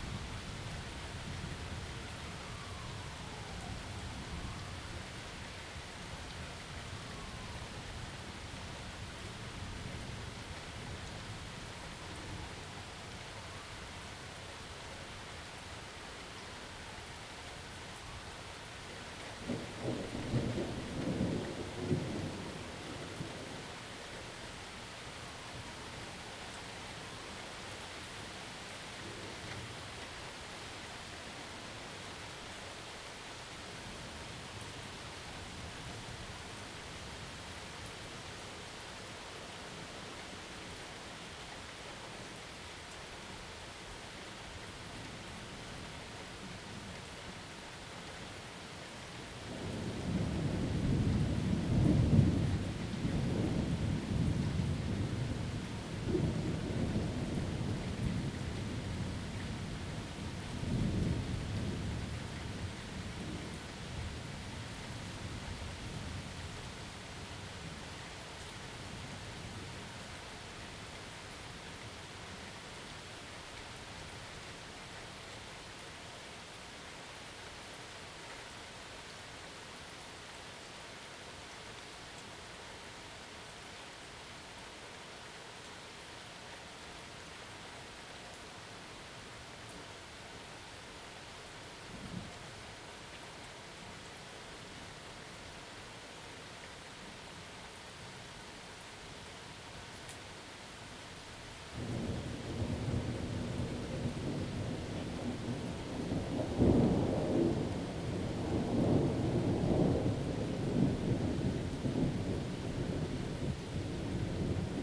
Leavenworth St, Manhattan, KS, USA - binaural front porch thunderstorm
Midnight thunderstorm in Manhattan, KS. Recording starts a little before the front hits with some rolling thunder in the distance and light rain, then louder thunder as the front hits. Thunder peaks just after the 40 minute mark and is followed by heavier rain that slowly fades out as the storm passes. Recorded in spatial audio with a Zoom H2n, edited and mixed to binaural in Reaper using Rode Soundfield.
Riley County, Kansas, United States, 24 June 2022